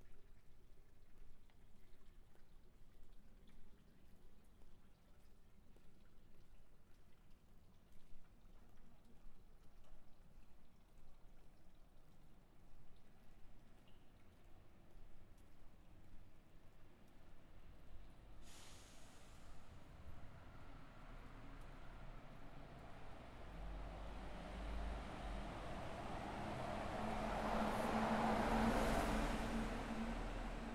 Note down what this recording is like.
H4n Zoom, walk through old city over Wettsteinbrücke and then left